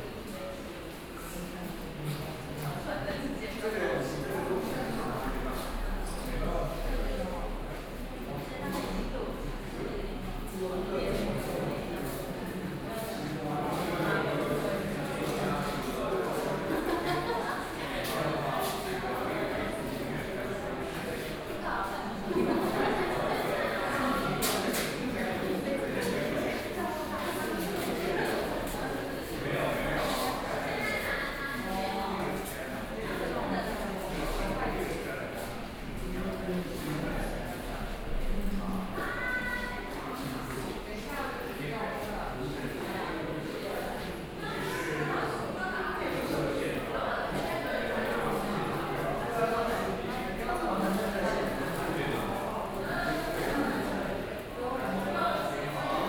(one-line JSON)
{"title": "VTartsalon, Taipei - Exhibition Opening", "date": "2013-06-29 19:39:00", "description": "Exhibition Opening, Sony PCM D50 + Soundman OKM II", "latitude": "25.07", "longitude": "121.53", "altitude": "15", "timezone": "Asia/Taipei"}